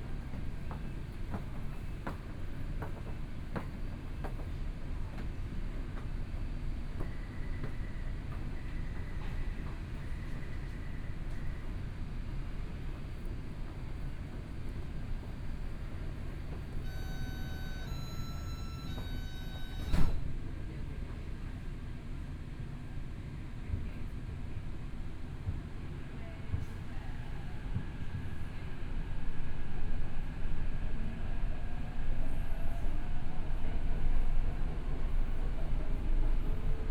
Tamsui Line, Taipei City - Tamsui Line (Taipei Metro)
from Beitou Station to Mingde Station, Binaural recordings, Zoom H4n + Soundman OKM II
Beitou District, 西安街二段257號